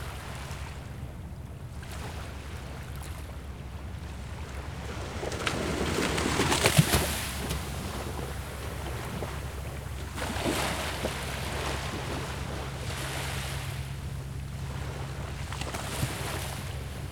Brooklyn, NY, USA - Coney Island Creek Park
Coney Island Creek Park.
Zoom H4n